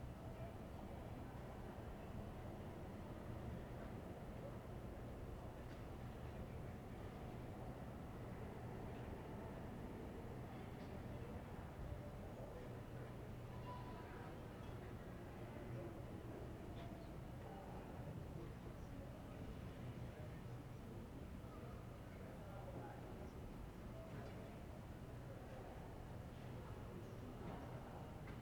Ascolto il tuo cuore, città. I listen to your heart, city. Several chapters **SCROLL DOWN FOR ALL RECORDINGS** - Paysage sonore avec chien et petards aux temps du COVID19
"Paysage sonore avec chien et petards aux temps du COVID19" Soundscape
Friday March 20th 2020. Fixed position on an internal terrace at San Salvario district Turin, ten days after emergency disposition due to the epidemic of COVID19.
Start at 1:08 p.m. end at 1:40 p.m. duration of recording 30'31''
20 March 2020, 13:08